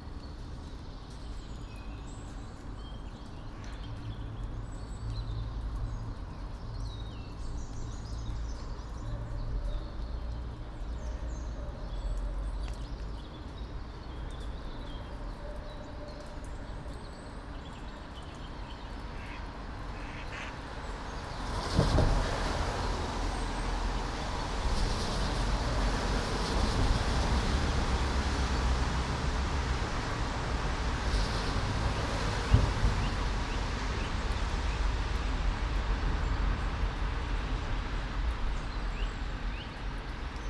all the mornings of the ... - mar 31 2013 sun